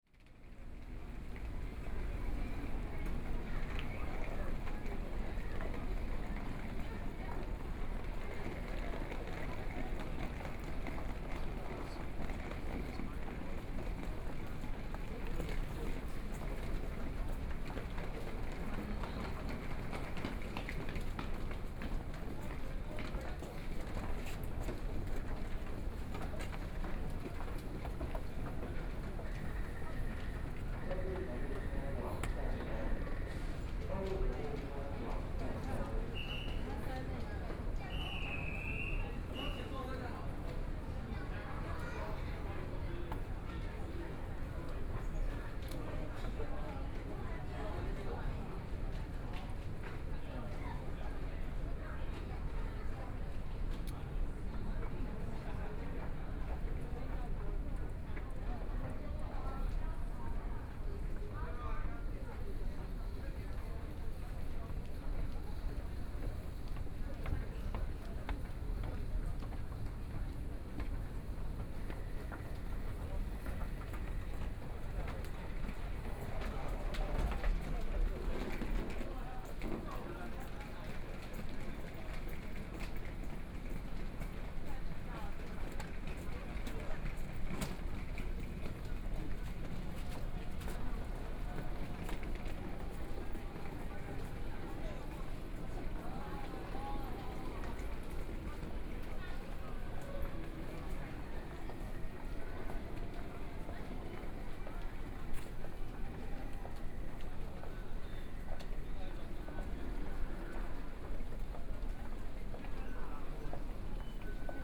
Taipei Main Station, Taiwan - walking in the Station
Follow the footsteps, From the underground MRT station to mall, Clammy cloudy, Binaural recordings, Zoom H4n+ Soundman OKM II
Zhongzheng District, Taipei City, Taiwan, 10 February 2014, ~20:00